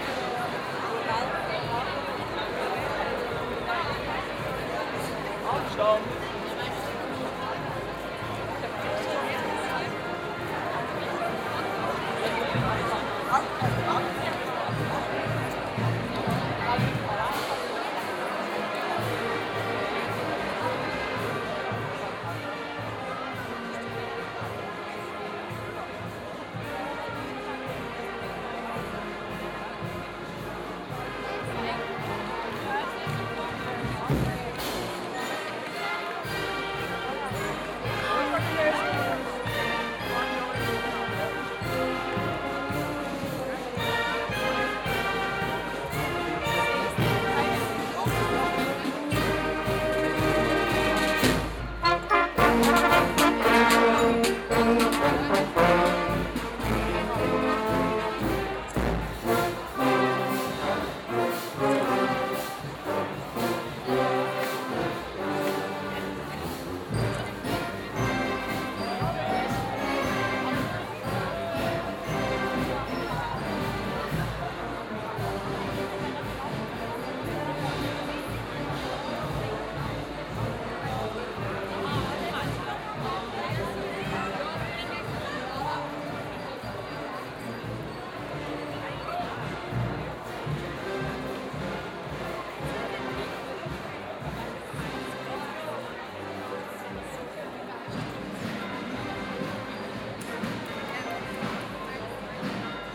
Aarau, Maienzug, Rathausgasse, Schweiz - Maienzug 2
Continuation of the Maienzugs. Due to noise there are three cuts in this recording. You hear first applause for the brassband of Maienzug 1 and their version of Michael Jackson's Thriller, other brass bands (one quote Smoke on the Water), and again the Burschenschafter with their strange rituals of singing and stamping.
Aarau, Switzerland, 1 July 2016